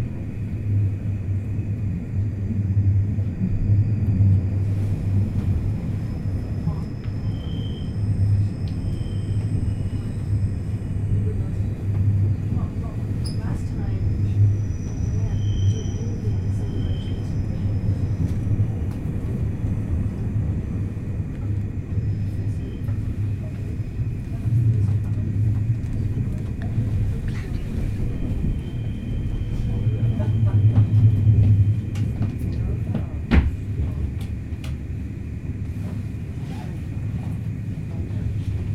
Ansage im Berninaexpress, Fahrt Tirano nach Berninapass, Weltkulturerbe